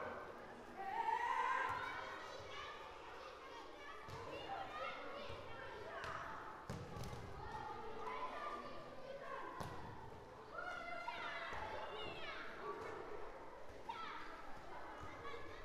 Plaça de Sant Felip Neri
Kids having freetime before lunch in a public square, famous for its historical influence. In this square, during the civil war, people was executed by firing squad.